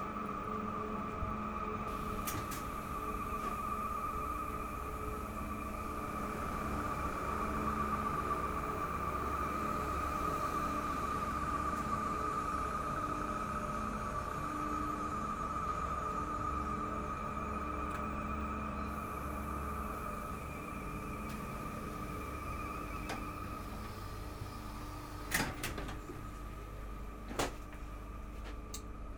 St Georges, Paris, France - Paris Saint-Lazare station
A trip into the Paris Saint-Lazare station. There's an old piano in the station. Persons are using it and singing songs about Jesus.